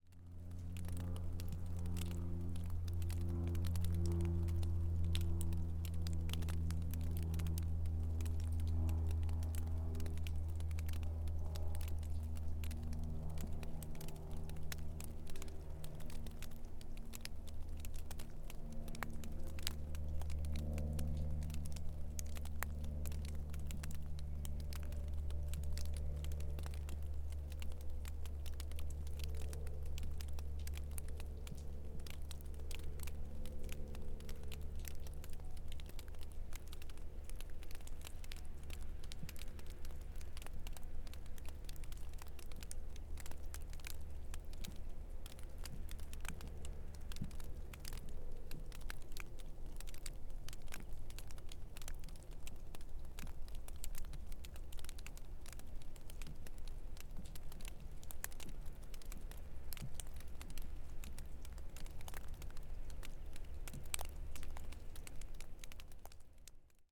Simpson Lake, Valley Park, Missouri, USA - Simpson Lake Shelter
Water droplets from snow melting on roof of Simpson Lake Shelter. Passing plane.